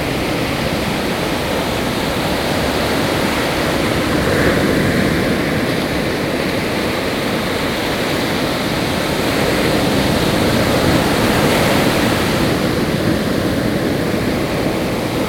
Rio de Janeiro, Copacabana waves
- Copacabana, Rio de Janeiro, Brazil